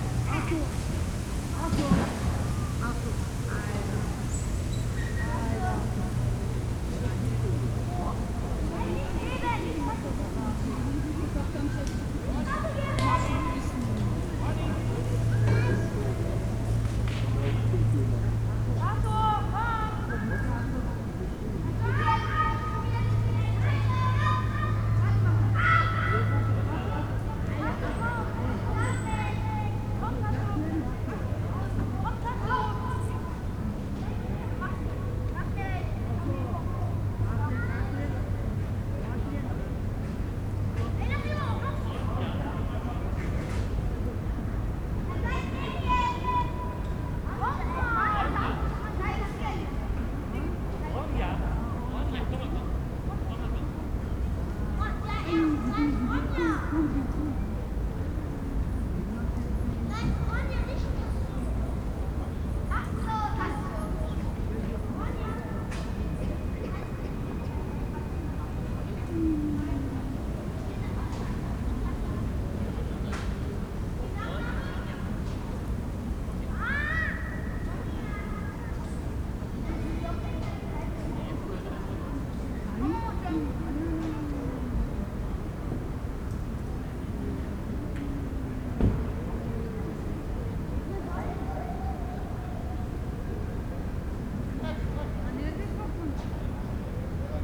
berlin, wildmeisterdamm: gropiushaus, innenhof - the city, the country & me: inner yard of gropiushaus
playing kids, worker loads logs on a truck
the city, the country & me: august 3, 2011